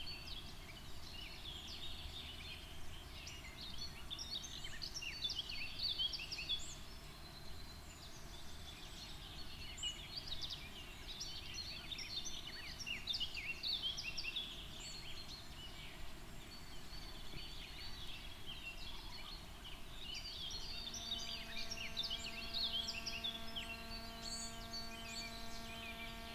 On the spot of former pond. bird chorus and wesps levitationg
10 May 2020, 07:13